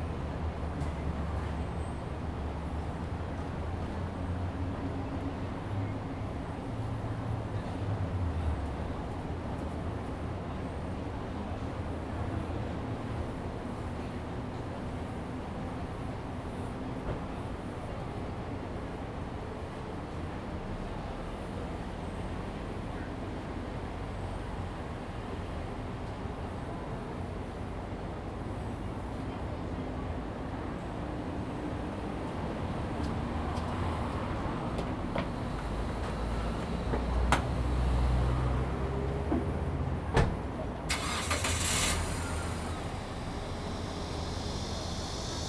Bitan Rd., 新店區, New Taipei City - Hot weather
Cicadas cry, Bird calls, Traffic Sound
Zoom H2n MS+ XY